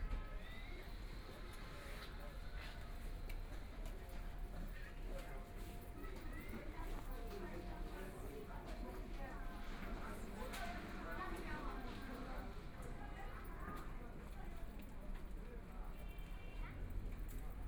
Walking in the narrow old residential shuttle, Binaural recording, Zoom H6+ Soundman OKM II